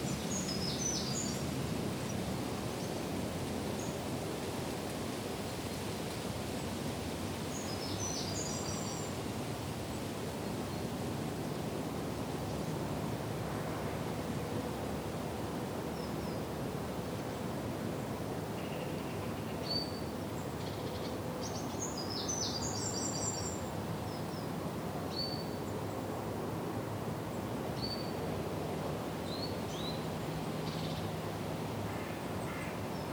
Hill Side, Lancaster, UK - Lancaster Priory Churchyard
An early morning meditation in the grounds of Lancaster Priory. Recorded with the coincident pair of built-in microphones on a Tascam DR-40 (with windshield on and 75Hz low cut).
13 August 2017, ~07:00